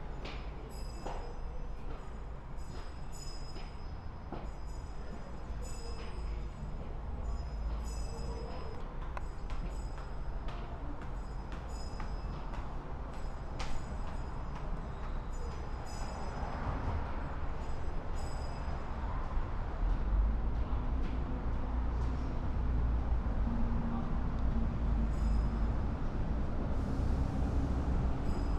Hand-rung bell in Hongik Station Underground, Seoul, South Korea
Seoul, South Korea, 4 December 2016